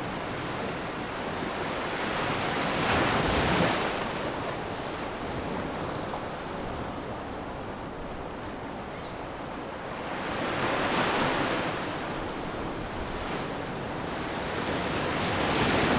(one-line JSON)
{"title": "橋仔漁港, Beigan Township - Small fishing port", "date": "2014-10-13 17:02:00", "description": "Sound wave, Small fishing port\nZoom H6 +Rode NT4", "latitude": "26.24", "longitude": "119.99", "altitude": "14", "timezone": "Asia/Shanghai"}